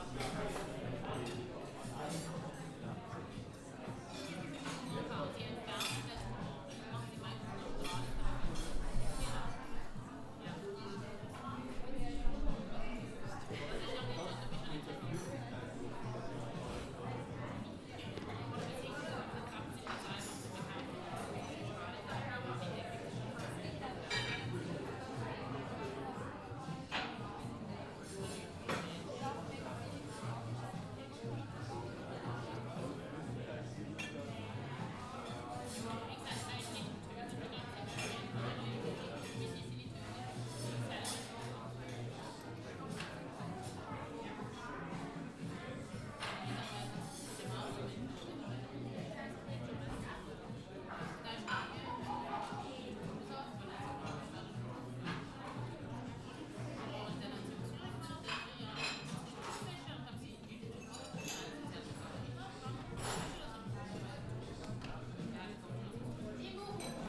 Charles-Eames-Straße, Weil am Rhein, Deutschland - Im Café des Depot
Innenaufnahme Café am Feuerwehhaus / Vitra / Weil am Rhein
Weil am Rhein, Germany, 5 May, 13:13